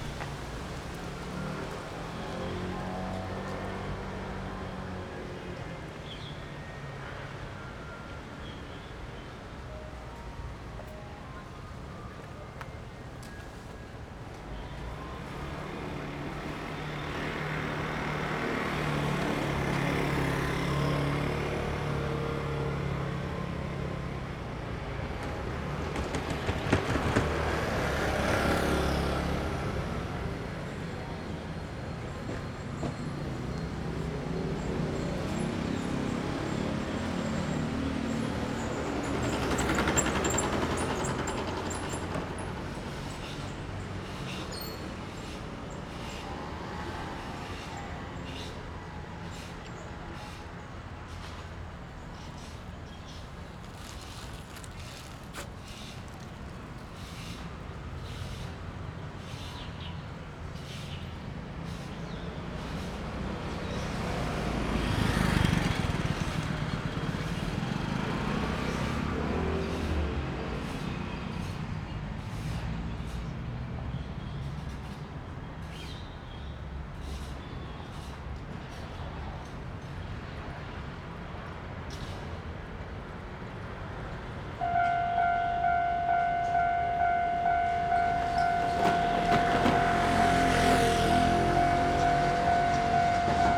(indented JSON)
{
  "title": "Xingzhu St., East Dist., Hsinchu City - in the railroad crossing",
  "date": "2017-02-13 14:14:00",
  "description": "In the railway level road, Traffic sound, Train traveling through\nZoom H6 +Rode NT4",
  "latitude": "24.80",
  "longitude": "120.97",
  "altitude": "32",
  "timezone": "Asia/Taipei"
}